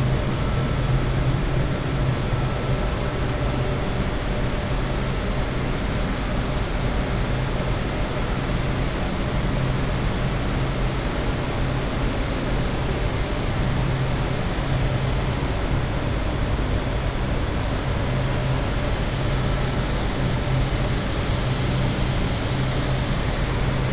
düsseldorf, data center
noise of servers and aircons in data center. international exchange point for internet traffic.